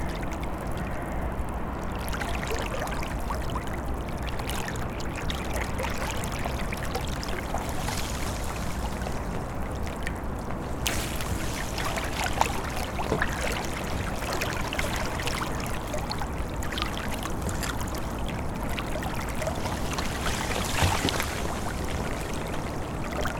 Recording of a river flowing past a melting frozen ice ridge. Small pieces of ice are floating by and sometimes bumping into the melted edge. Cityscape and birds are also heard in the distance. Recorded with ZOOM H5.
Kauno miesto savivaldybė, Kauno apskritis, Lietuva, 23 February 2021